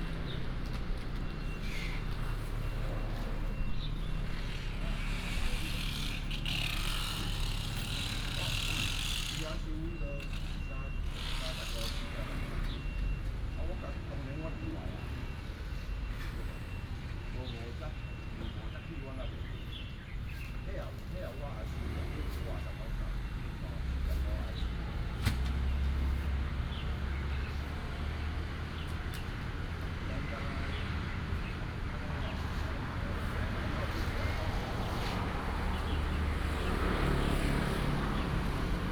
Vendors, breakfast, Bird call, Traffic sound, Binaural recordings, Sony PCM D100+ Soundman OKM II